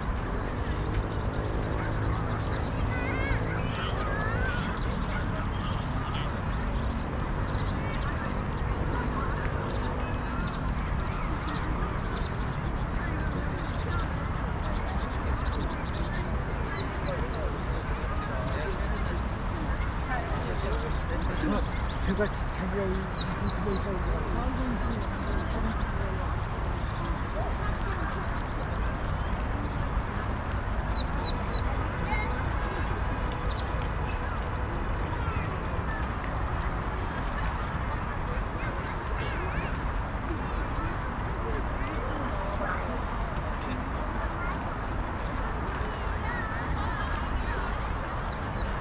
대한민국 서울특별시 서초구 잠원동 122-1번지 - Banpo Hangang Park, Birds Chirping
Banpo Hangang Park, Birds Chirping
반포한강공원, 새떼 지저귐
20 October